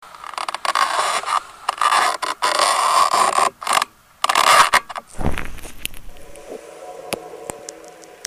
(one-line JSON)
{"title": "Glienicke Brucke, Potsdam secret code", "latitude": "52.41", "longitude": "13.09", "altitude": "27", "timezone": "GMT+1"}